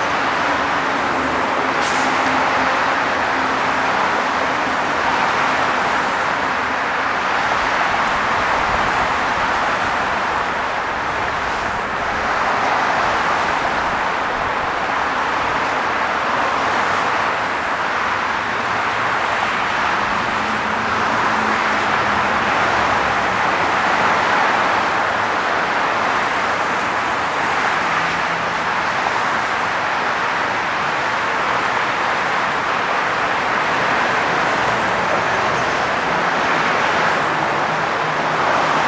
101 Freeway, downtown Los Angeles
freeway, traffic, Los Angeles, auto, downtown